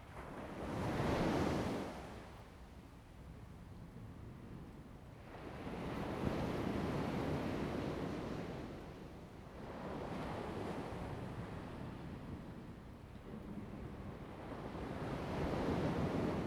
青岐, Lieyu Township - Sound of the waves
Sound of the waves
Zoom H2n MS +XY